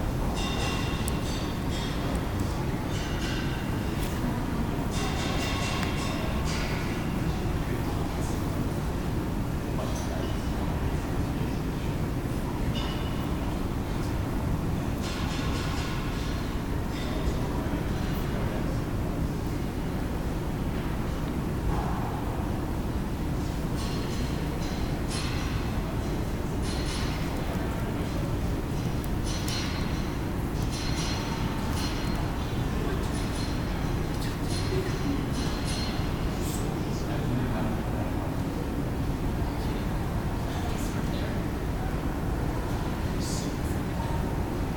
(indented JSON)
{
  "title": "St NW, Edmonton, AB, Canada - The Hub-Bub of HUB-Mall",
  "date": "2022-03-16 11:53:00",
  "description": "This is a sonic photograph taken from HUB Mall at the University of Alberta. It's nothing special. It's simply students passing by and chatting with friends, maybe inhaling some coffee before the next class. I wanted to give a sample of the everyday life here in Edmonton. The recording is done from an online D.A.W. and might be poor, but I'm saving up to get a good audio recorder. This is to be the first of several samples that I'll will upload until I see fit.\nThank you, Professor, for introducing me to this wonderful site.",
  "latitude": "53.53",
  "longitude": "-113.52",
  "altitude": "676",
  "timezone": "America/Edmonton"
}